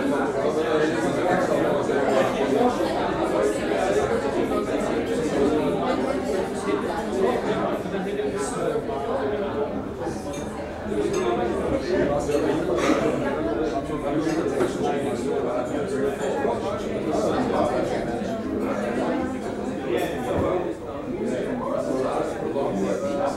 weinhaus sittl zum goldenen pelikan
wien, October 2009